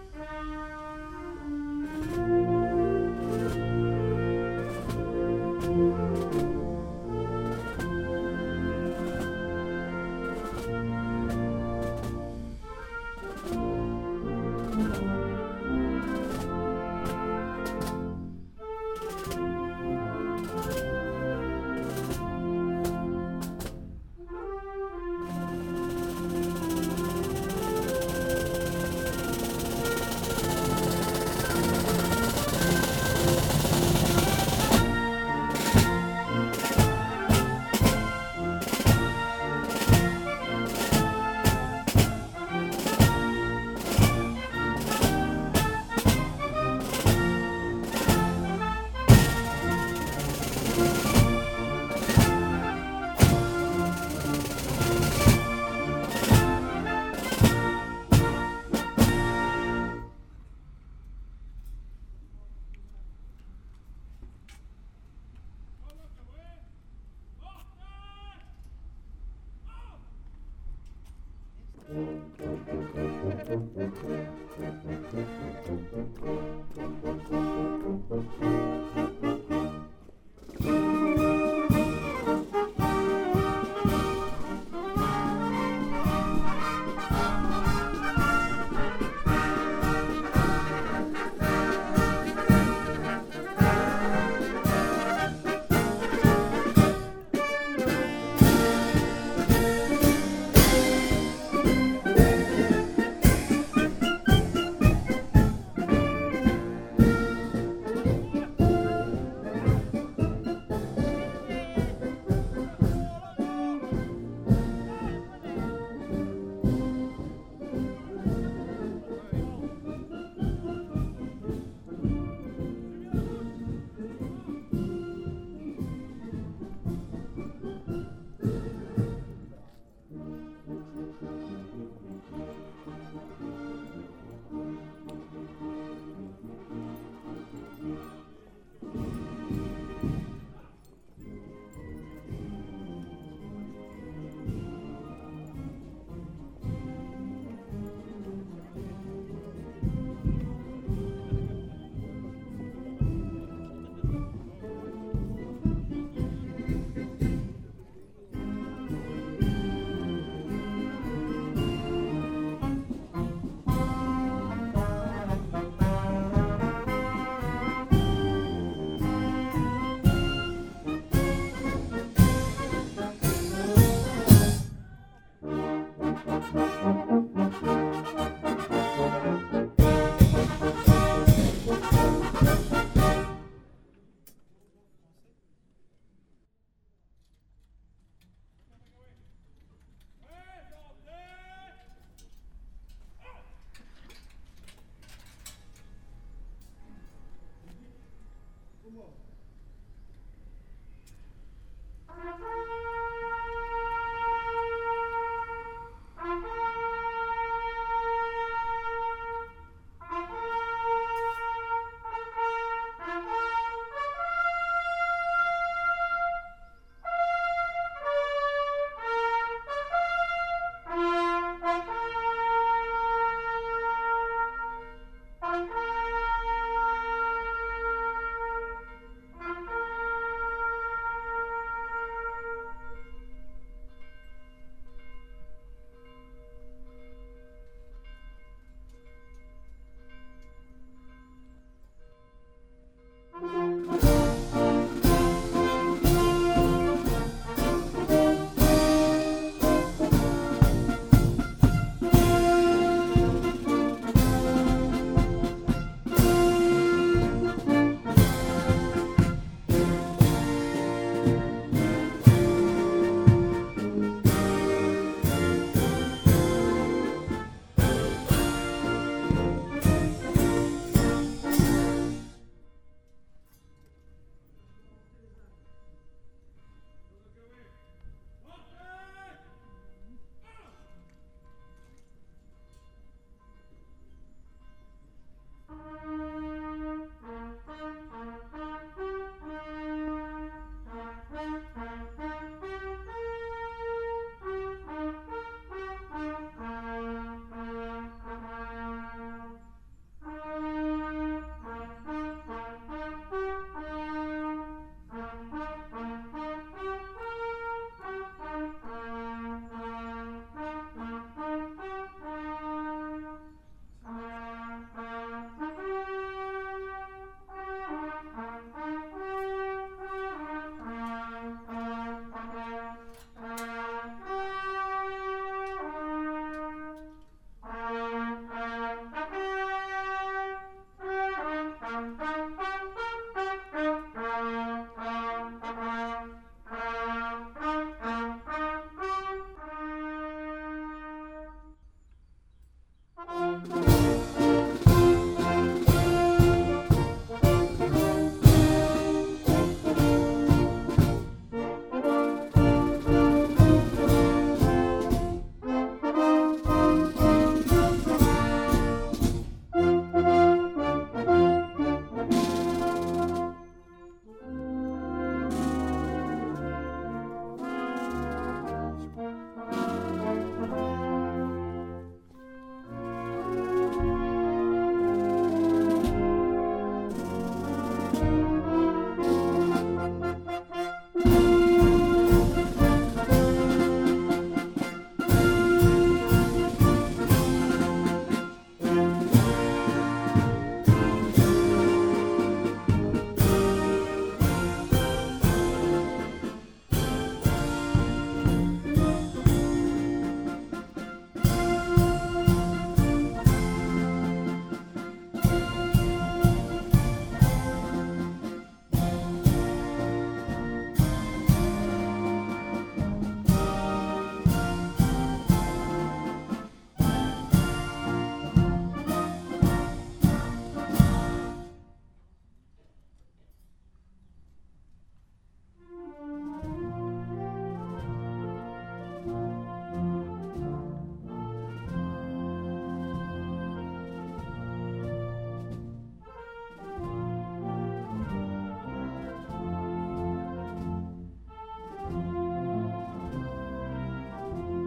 Court-St.-Étienne, Belgique - Franco-Belge Ceremony
A ceremony called Franco-Belge (France-Belgium), honoring the memory of the Bruile-Saint-Amand French fighters deceased at Court-St-Etienne. Fanfare is playing Brabançonne and Marseillaise, the two hymns.
Court-St.-Étienne, Belgium, 19 May